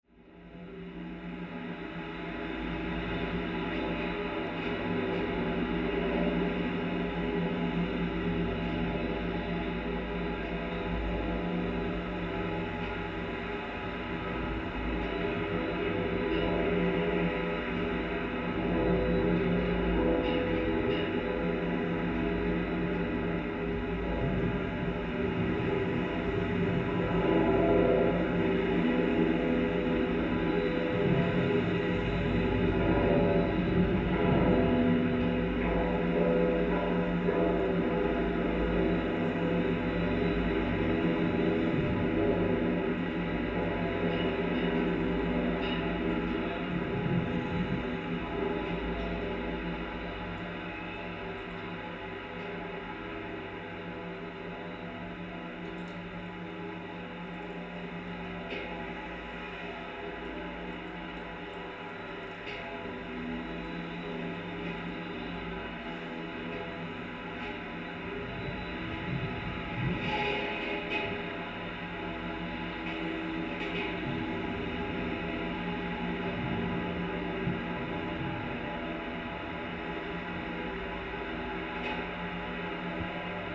Vilnius, Lithuania, metallic stairs at the bridge
metallic staircase for pedestrians on the bridge. contact microphone recording
16 September